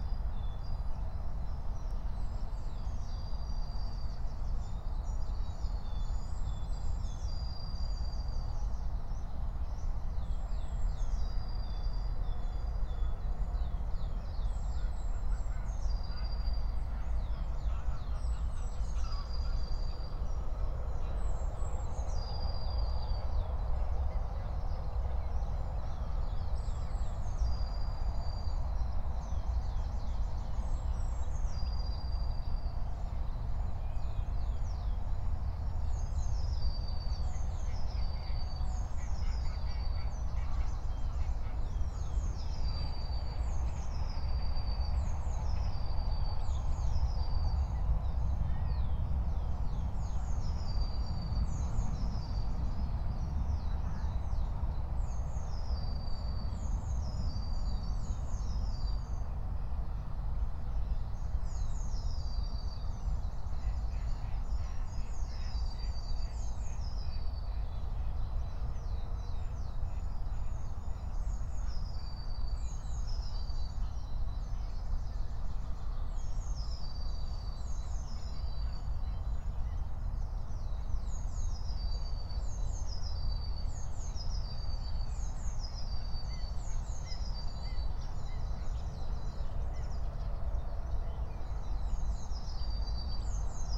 06:00 Berlin Buch, Lietzengraben - wetland ambience
Deutschland, 14 April 2022, 06:00